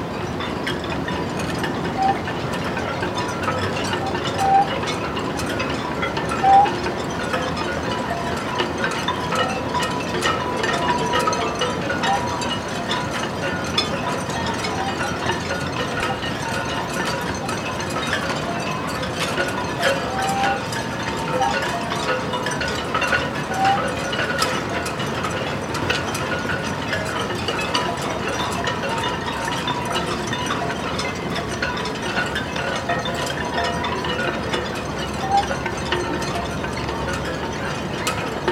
marseille harbour - a special transportation machine unloading a ship sideways
Marseille, France